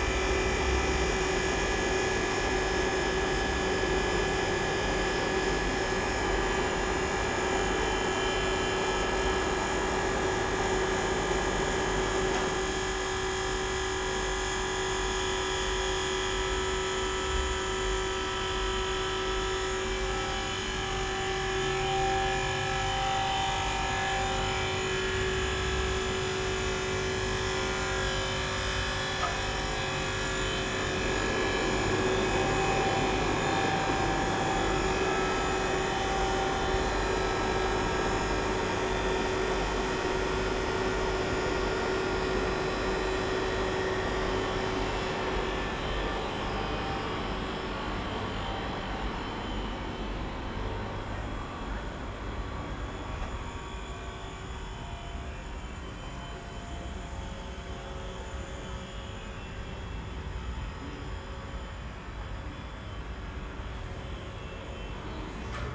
Hotel Parc Belle-Vue, Luxemburg - aircon
various sonic pattern of an aircon, above the hotel garage
(Olympus LS5, Primo EM172)